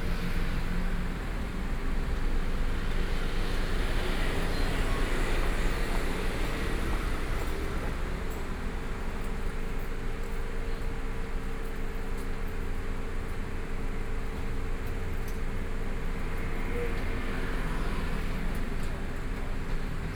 Changhua, Taiwan - Intersection
Traffic Noise, In front of convenience stores, Zoom H4n + Soundman OKM II